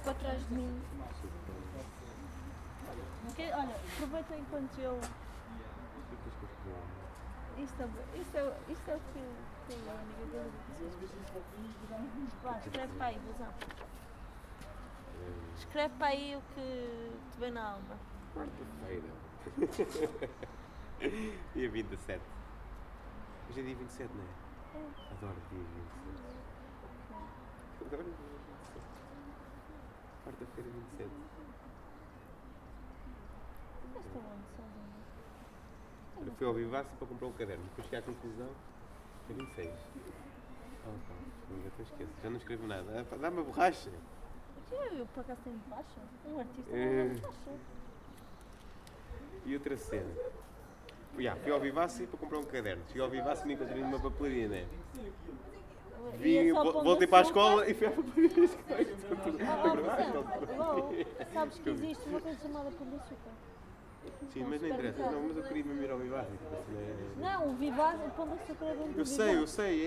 ESAD, Caldas da Rainha, Portugal - Students conversation
Recorded with a Zoom H4. In this clip you can hear the sounds of students talking at ESAD (Escola Superior de Artes e Design), at Caldas da Rainha. This school is surrounded by a lot of trees and nature. The recording took place at a balcony, in the afternoon of a cloudy day.